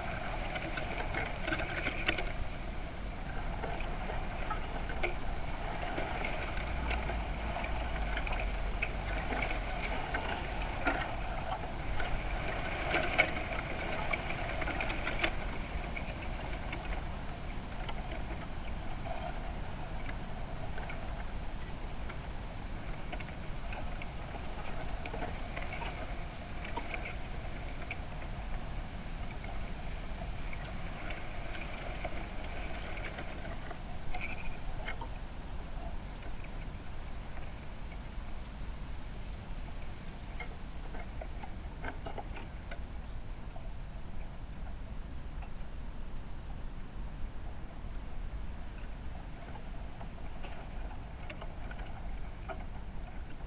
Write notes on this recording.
미조항 대왕태나무 2번 방문_Giant bamboo 2nd visit